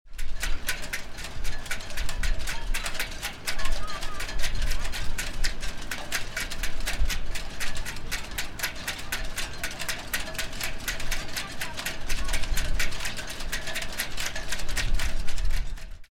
Sitges boats WLD
World listening day, Sitges, boats